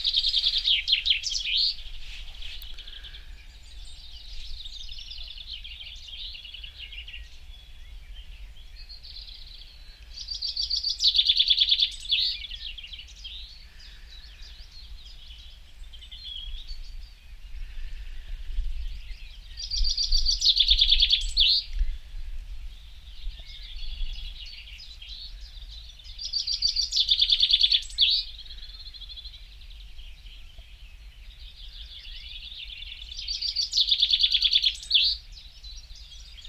out of Chickerell and into countryside
spring, birds, Chickerell
Dorset, UK, 10 April